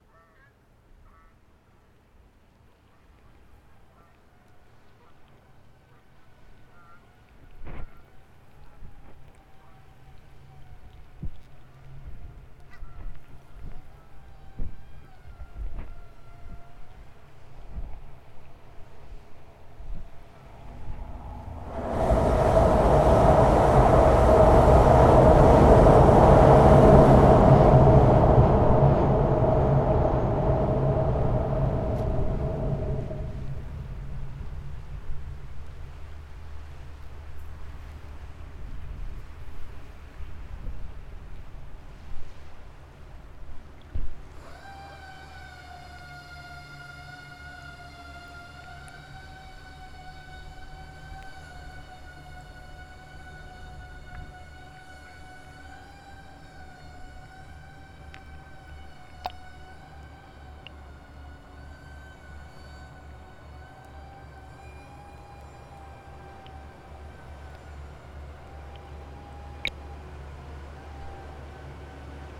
Schalkwijk, Pont, Schalkwijk, Netherlands - ferry, boats
ferry & boats on the river Lek, Zoom H2n + 2x hydrophones
Utrecht, Nederland, 15 September 2022